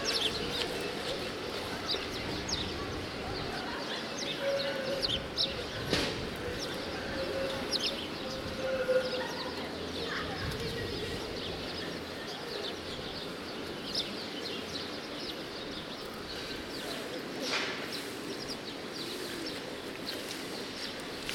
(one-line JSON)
{"title": "Gottfried-Keller-Strasse, Zürich, Switzerland - Park Stadelhofen", "date": "2020-03-23 12:39:00", "description": "Recorded in ambisonic B Format on a Twirling 720 Lite mic and Samsung S9 android smartphone, downmixed into binaural", "latitude": "47.37", "longitude": "8.55", "altitude": "413", "timezone": "Europe/Zurich"}